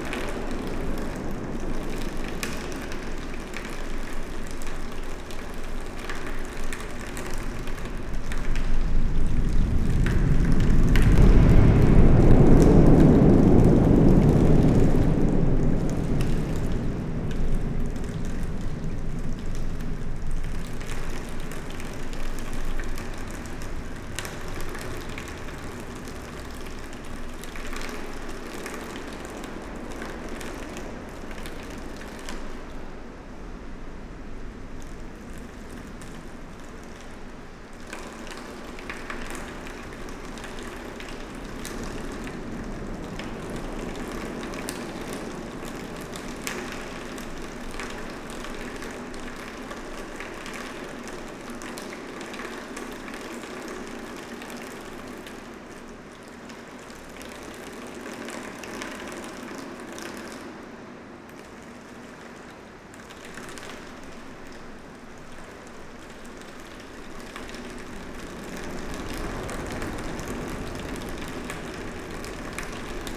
Dinant, Belgium - Charlemagne bridge
Inside the Charlemagne bridge, sound of the water collected in strange curved tubes. Water is flowing irregularly.